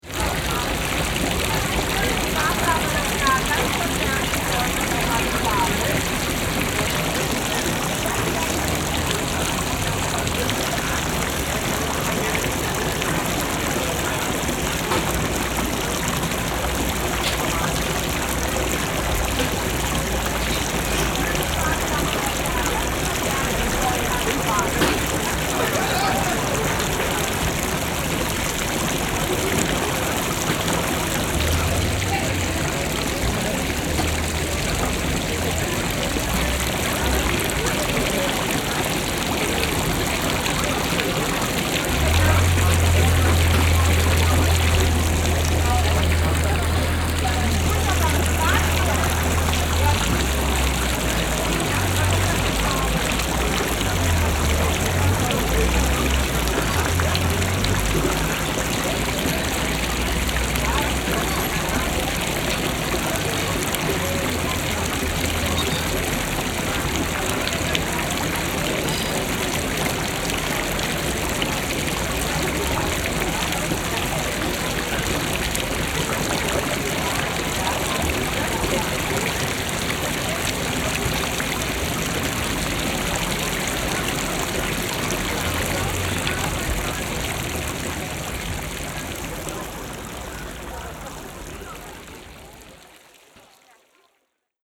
Rüttenscheid, Essen, Deutschland - essen, rüttenscheider markt, old market fountain
Am Rüttenscheider Markt. Der Klang des alten, wieder restaurierten Marktbrunnens
At the market place. The sound of the old, now renovated market fountain.
Projekt - Stadtklang//: Hörorte - topographic field recordings and social ambiences
Essen, Germany, 26 April, 13:30